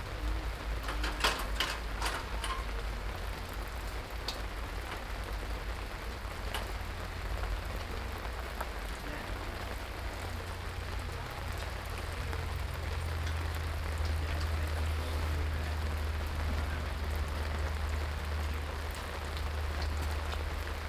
binaural recording, rain, construction, people